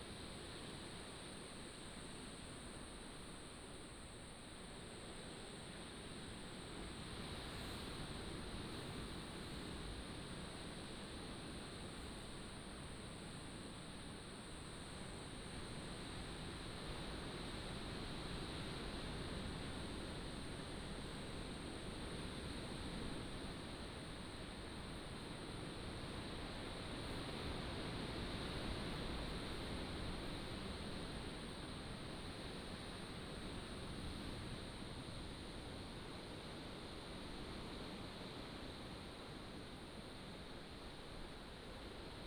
燕子洞, Lüdao Township - next to a large rock cave
Standing next to a large rock cave, Sound of the waves